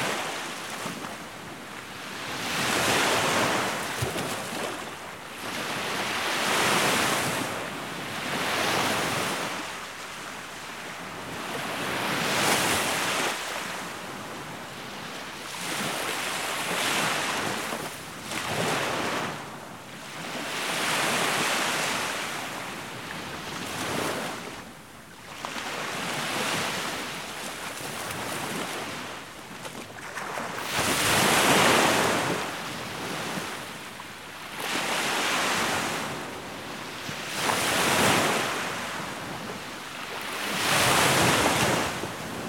Sobieszewo, Fale - Sea waves on beach
Gdańsk, Poland, 31 August 2012, ~18:00